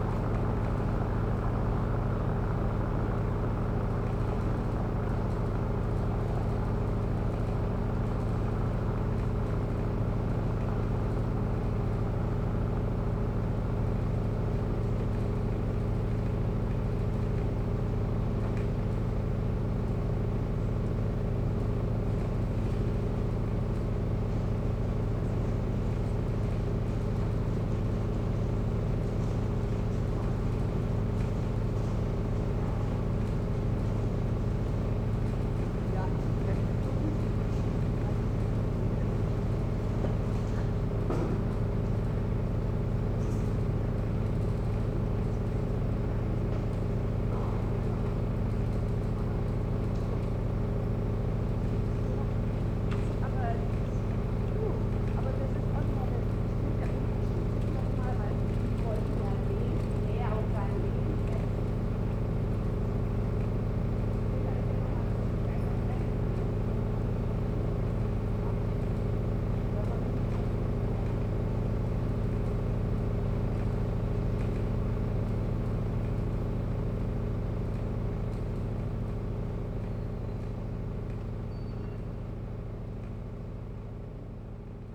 berlin, reuterstraße: verkehrsinsel - the city, the country & me: traffic island
generator at a construction site of a combined sewer
the city, the country & me: august 20, 2010
Berlin, Germany, 28 August 2010